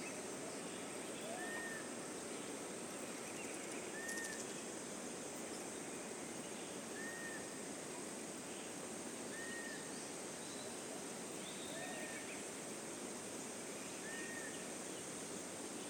Mairiporã - State of São Paulo, Brazil - Brazil Atlantic Forest - Cantareira State Park - Lago das Carpas
Recording during the morning of December 5th.
Equipment used Sound Devices 702 & Sennheiser 8020 A/B.
Photograph by Ludgero Almeida.
São Paulo - SP, Brazil, 5 December 2016, 11:30